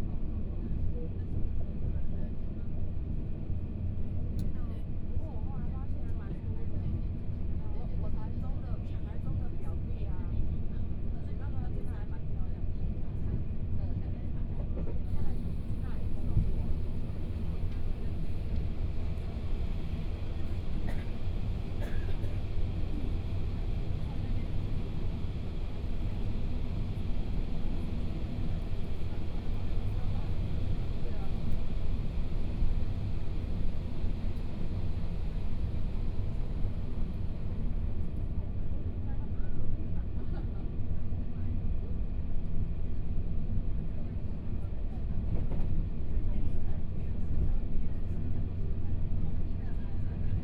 Fuli Township, Hualien County - Taroko Express
Interior of the train, from Chishang Station to Fuli Station, Binaural recordings, Zoom H4n+ Soundman OKM II